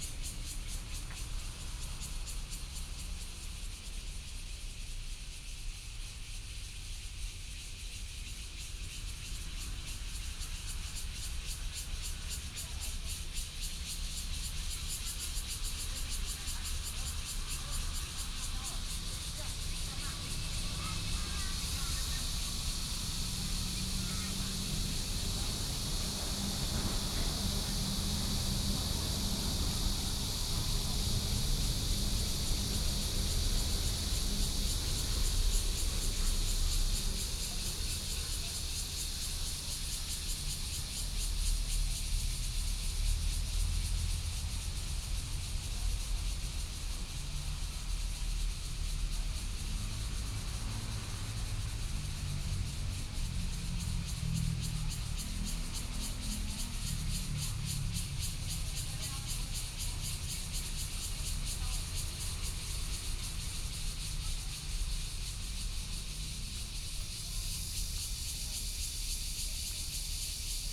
楊梅市富岡里, Taoyuan County - Abandoned factory
in theAbandoned factory, Birdsong sound, Cicadas sound, Traffic Sound, Far from the Trains traveling through
6 August, 17:36